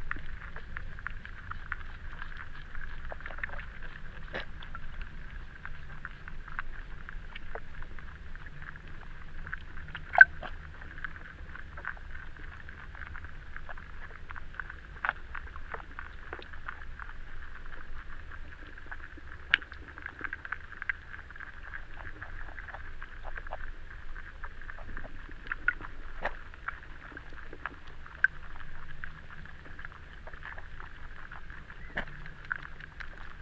Kuldīga, river Venta underwater
Hydrophone in river Venta